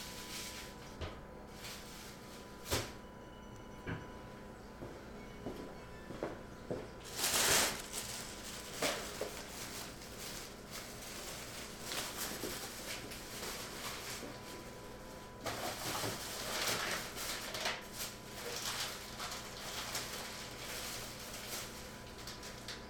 Madison Ave, Bldg V - HouseGrocs